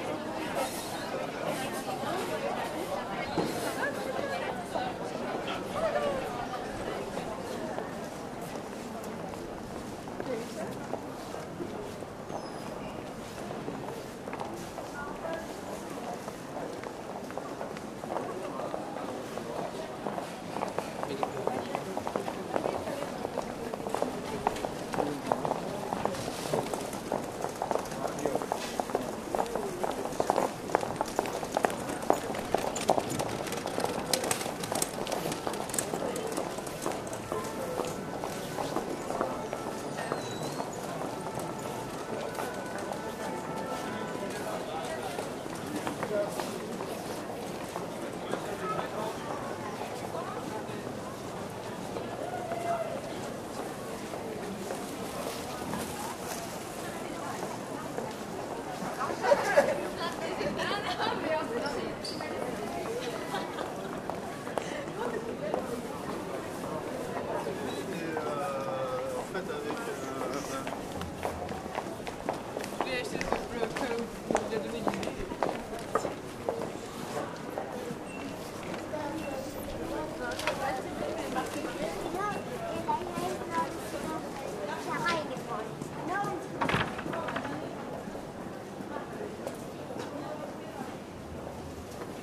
Paris, Rue Montorgueil
Closing time of seafood and fruit shops on Rue Montorgueil. Every business has an end.
Paris, France, 30 December 2010, 18:29